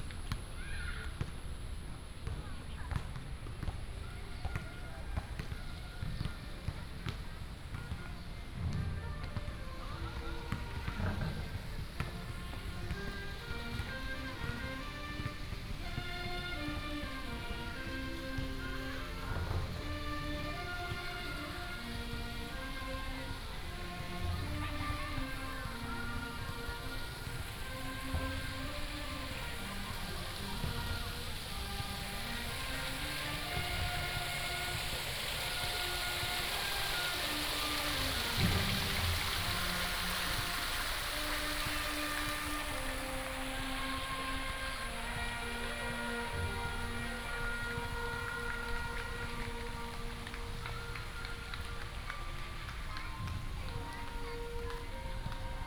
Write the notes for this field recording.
Walking in the park, Traffic sound, play basketball, fountain, Childrens play area, Saxophone show, Binaural recordings, Sony PCM D100+ Soundman OKM II